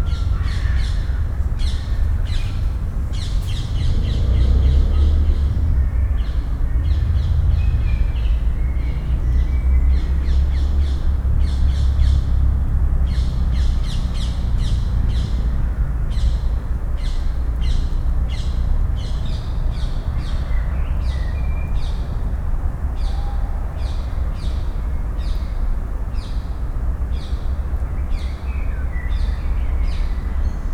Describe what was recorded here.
Levels are pushed high on this recording, just to hear if something finally comes out of the drone hum. SD-702, DPA 4060, AB position.